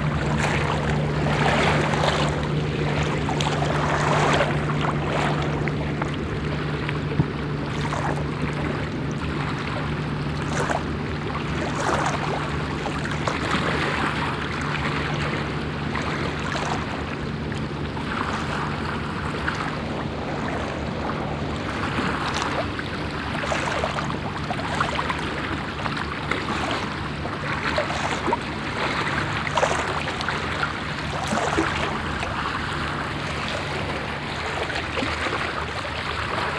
Sveio, Norwegen - Norway, Holsvik, bathing bay
At a bathing bay. The sounds of water lapping at the stony coast. A diver diving nearby in the shallow water. In the distance a motor boat.
international sound scapes - topographic field recordings and social ambiences
July 2012